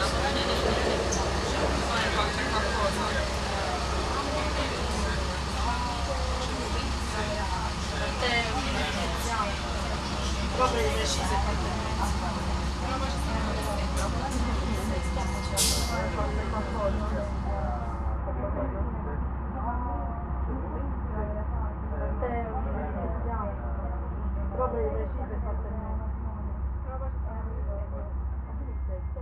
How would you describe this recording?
The beautiful train line between Benevento and Avellino in the rural area of Irpina is threatened to be shut down in October 2012. Also the line between Avellino and Rocchetta is facing its end. The closing of the rail lines is a part of a larger shut down of local public transport in the whole region of Campania. These field recordings are from travels on the train between Benevento-Avellino and are composed as an homage to the Benevento-Avellino -and Avellino-Rocchetta line. Recorded with contact mic, shotgun and lavalier mics.